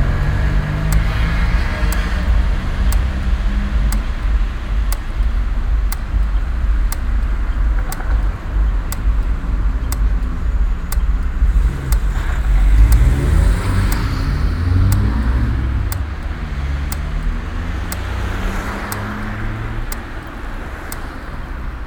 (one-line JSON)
{"title": "cologne, aachenerstrasse - moltkestrasse - ampelanlage", "date": "2008-09-05 16:32:00", "description": "nachmittags an ampelanlage - stereophones klicken im strassenverkehr\nsoundmap nrw - social ambiences - sound in public spaces - in & outdoor nearfield recordings", "latitude": "50.94", "longitude": "6.93", "altitude": "53", "timezone": "Europe/Berlin"}